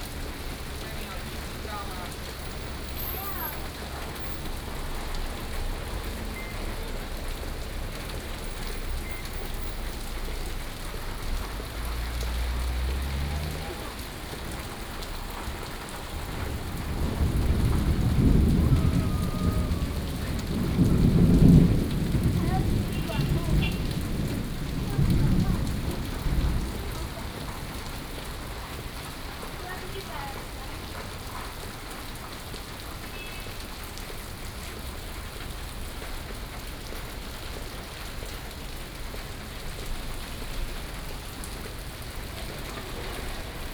Aly., Ln., Sec., Xinyi Rd., Da’an Dist. - Thunderstorm
Thunderstorm, Traffic Sound
Da’an District, Taipei City, Taiwan